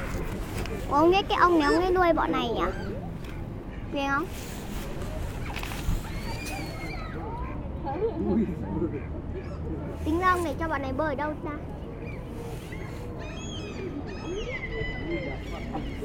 Malmö, Suède - Malmö main square
During a very shiny afternoon on a day off, many people are staying on the main square of Malmö. Black-headed Gull shouting, two teenagers irritated with the bird, 3 persons sitting ON my microphones (they didn't see it !), Mallard duck eating bread crumbs and... my microphones. Tough life !
17 April 2019, ~16:00